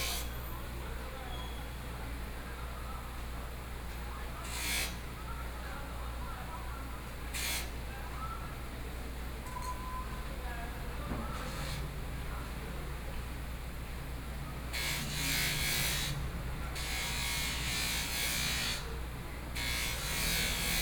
Yilan City, Taiwan - Knife grinding sound
Knife grinding sound, Binaural recordings, Zoom H4n+ Soundman OKM II